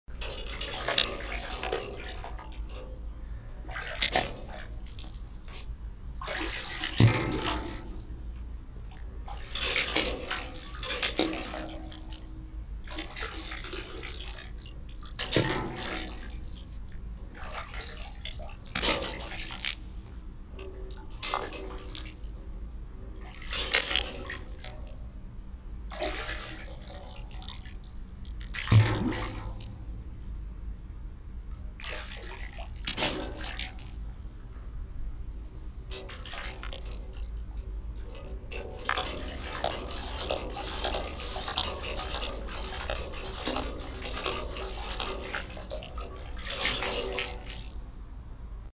wasserorchester, plumps klavier
H2Orchester des Mobilen Musik Museums - Instrument Plumps Klavier - temporärer Standort - VW Autostadt
weitere Informationen unter